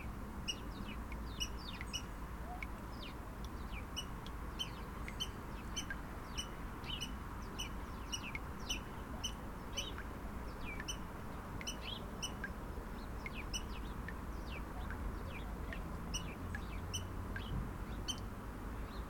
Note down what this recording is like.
Sur un ponton flottant baies de Mémard au bord de l'eau près d'une roselière, faible activité des oiseaux en cette saison, les cris répétitifs d'une poule d'eau, quelques moineaux, des canards colvert, goelands au loin.....